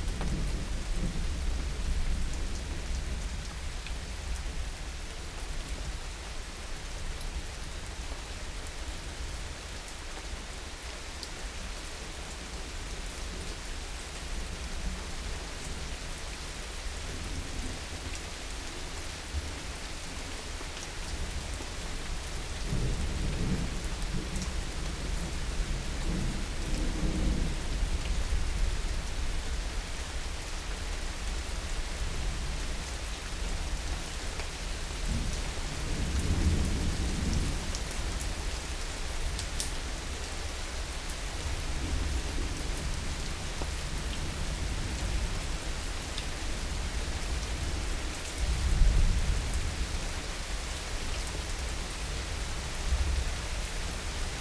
Orzechowa, Gostyń, Polska - Summer Rain and Thunder
This is a recording of rain with thunder made in the backyard garden in the evening. Usi Pro microphones were used in AB position on a Rode Stereo Bar with Sound Devices MixPre-6-II.
województwo wielkopolskie, Polska, July 2021